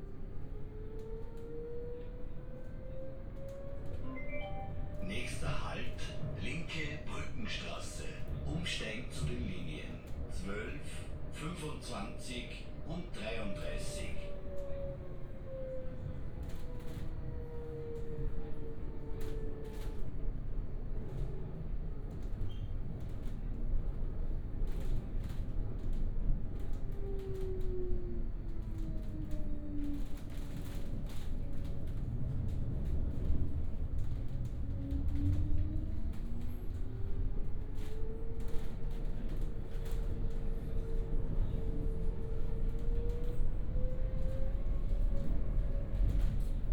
Linz, Harbach, Tram - tram ride
tram ride on line 1 towards University
(Sony PCM D50, OKM2)